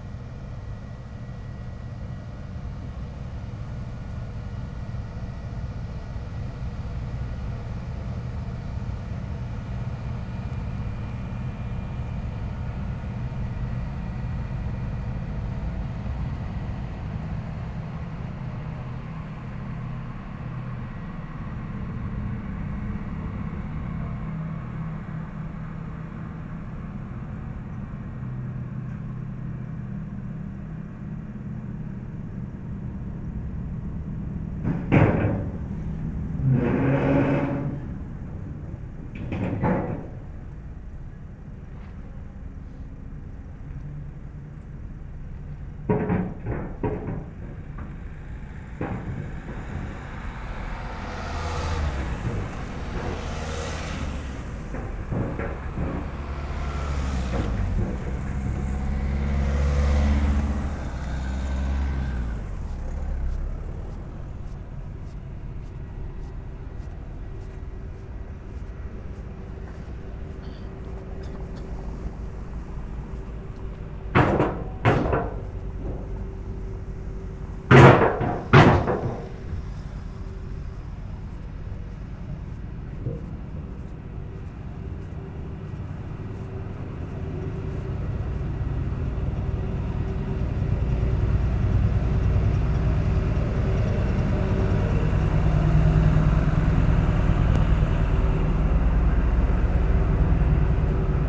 Sahurs, France - La Bouille - Sahurs ferry
We are crossing the Seine river, using the Ferry from Sahurs and going to La Bouille.